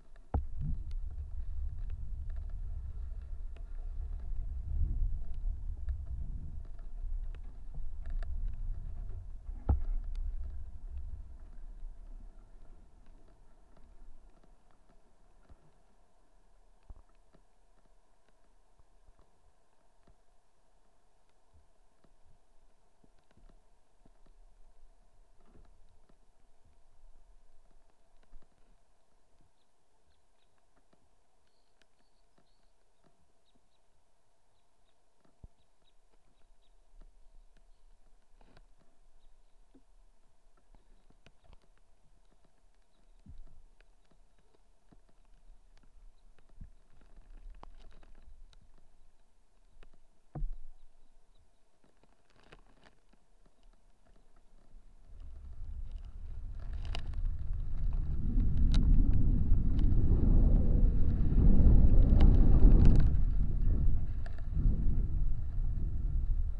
Utena, Lithuania - wind play on the first ice
contact microphones placed on a sheet of first ice